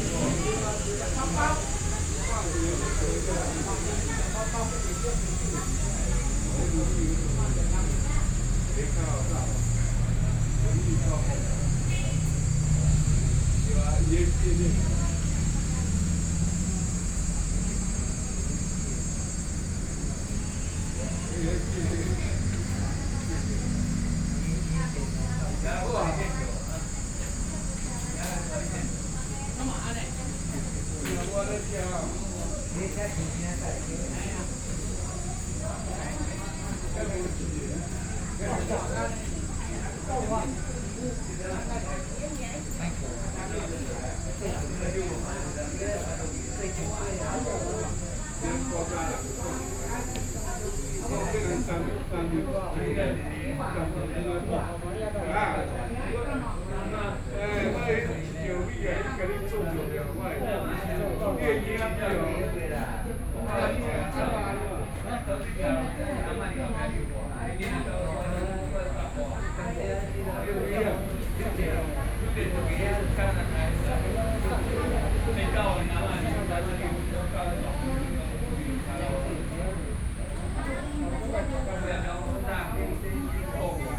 內湖區碧湖公園, Taipei City - in the Park
Traffic Sound, A group of elderly people chatting, Cicadas sound, Hot weathe
Sony PCM D50+ Soundman OKM II